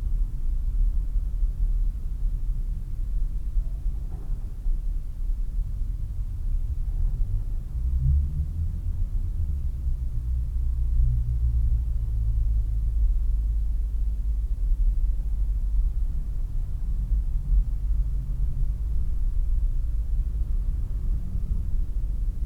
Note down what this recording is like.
… listening to the hum of the morning rush hour on the “Wilhelmstrasse” from inside the attic… I can hear people pulling up the blinds downstairs… I open the window… …im dreieckigen Holzraum des Trockenbodens höre ich dem Brummen der “morning rush hour” auf der Wilhelmstrasse zu… irgendwo unter mir ziehen die Leute ihre Jalousien hoch… ich öffne eine der Dachluken…